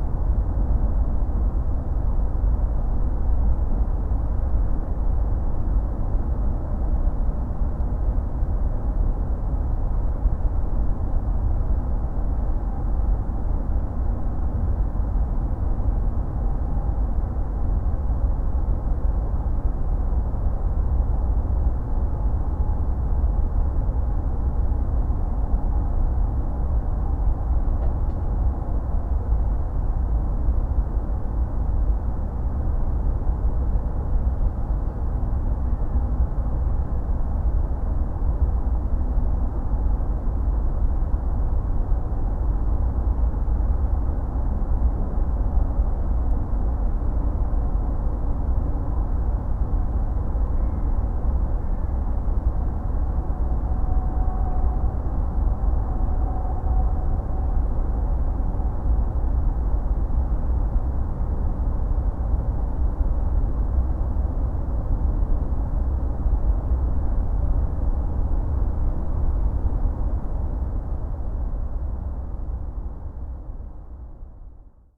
{"title": "Tempelhof, Berlin - drone, ambience", "date": "2013-12-17 13:20:00", "description": "it's noisy today on the Tempelhof airfield. deep drones from the motorway A100 south-west, and from excavating work. a pond will be build for advanced water management, the work has started in autumn 2013.\n(PCM D50, Primo EM172 spaced)", "latitude": "52.48", "longitude": "13.40", "altitude": "34", "timezone": "Europe/Berlin"}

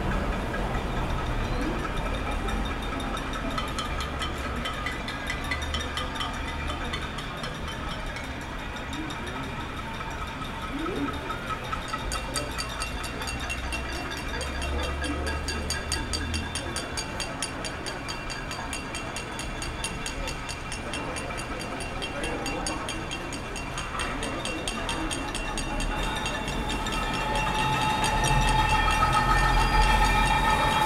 {"title": "R. Paulo Orozimbo - Cambuci, São Paulo - SP, 01535-000, Brazil - Panelaço (Pot-banging protest) - Fora Bolsonaro! - 20h30", "date": "2020-03-18 20:30:00", "description": "Panelaço contra o presidente Jair Bolsonaro. Gravado com Zoom H4N - microfones internos - 90º XY.\nPot-banging protests against president Jair Bolsonaro. Recorded with Zoom H4N - built-in mics - 90º XY.", "latitude": "-23.57", "longitude": "-46.62", "altitude": "767", "timezone": "America/Sao_Paulo"}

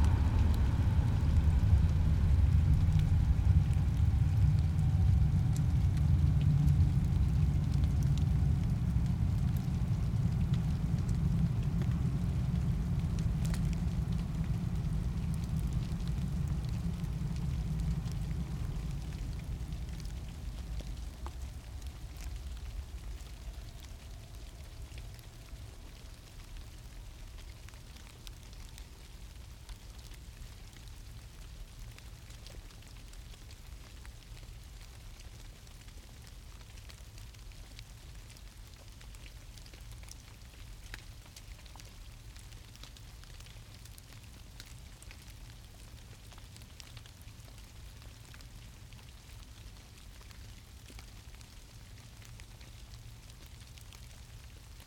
{"title": "Das Nasse Dreieck (The Wet Triangle), wildlife and the distant city in a secluded green space, once part of the Berlin Wall, Berlin, Germany - Long and heavy freight train", "date": "2021-03-18 22:57:00", "description": "Followed by normal SBahn passenger trains.", "latitude": "52.56", "longitude": "13.40", "altitude": "42", "timezone": "Europe/Berlin"}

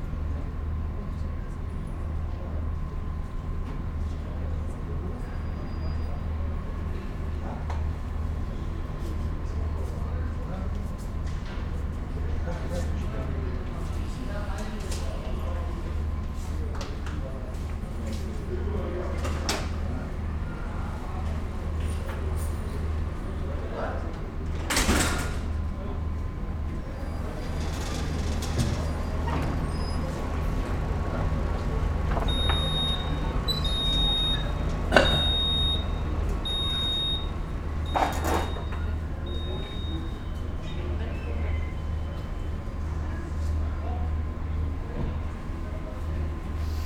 {"title": "Gare De Vaise, Lyon, France - Gare aux oreilles !", "date": "2017-05-03 19:36:00", "description": "PAS - Parcours Audoi Sensible, écouter la gare, dedans/dehors\nSoundwalk, listening to Station, indoor, outdoor.", "latitude": "45.78", "longitude": "4.80", "altitude": "172", "timezone": "Europe/Paris"}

